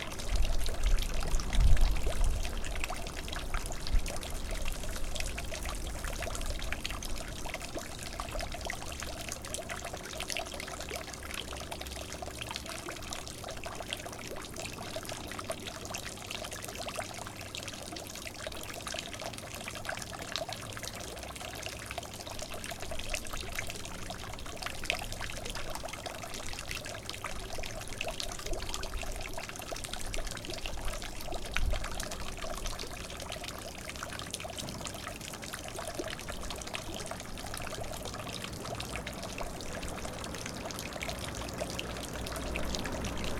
zürich 8 - zolliker-/ecke altenhofstrasse, brunnen
zolliker-/ecke altenhof-strasse
13 October 2009